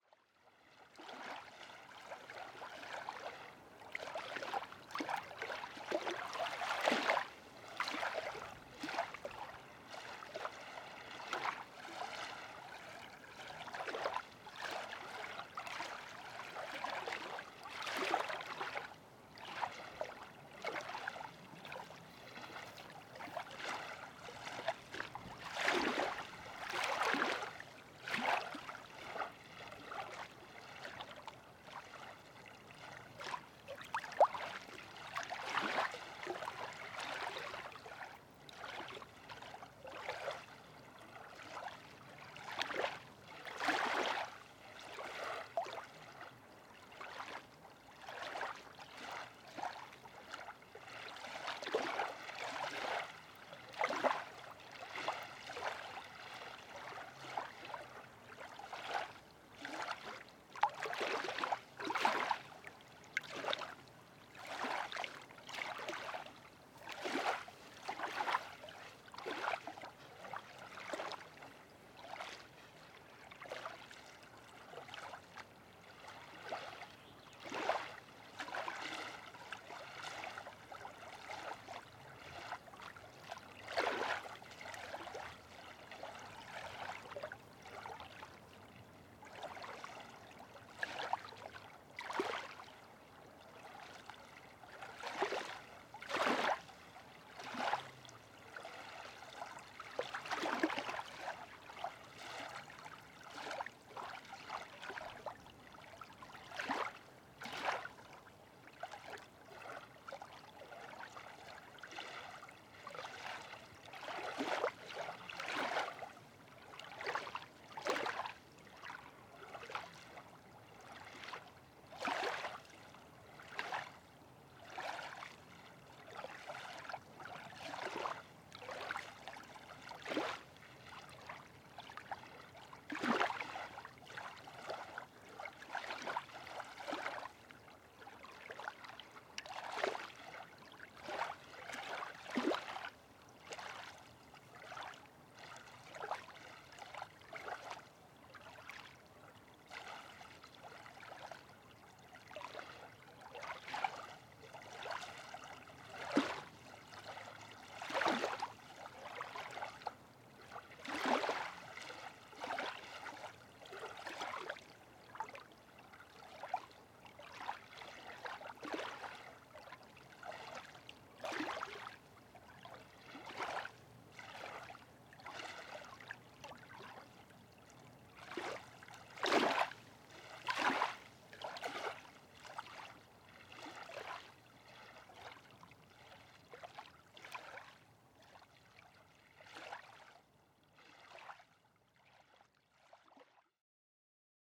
Lake Luodis, Lithuania, shore
sunny and windy day. at lakeshore